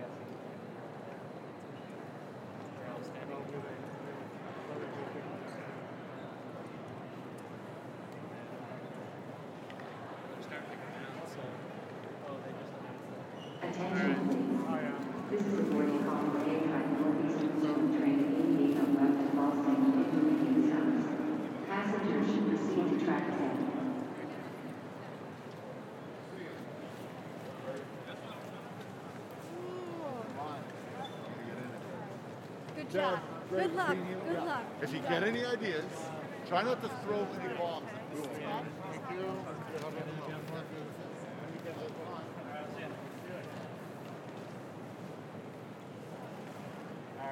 Sounds from the Moynihan Train Hall at New York Penn Station.
United States, 26 February 2022